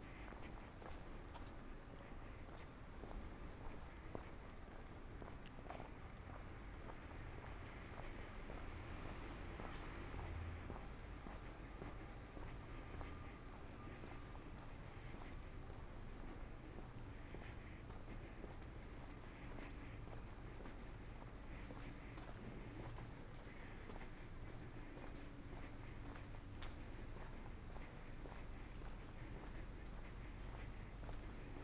Ascolto il tuo cuore, città. I listen to your heart, city. Several chapters **SCROLL DOWN FOR ALL RECORDINGS** - Evening walk with plastic waste in the time of COVID19 Soundwalk
Tuesday March 17 2020. Walking in San Salvario district in the evening, including discard of plastic waste, one week after emergency disposition due to the epidemic of COVID19.
Start at 8:55 p.m. end at 9:01 p.m. duration of recording 26'16''
The entire path is associated with a synchronized GPS track recorded in the (kml, gpx, kmz) files downloadable here: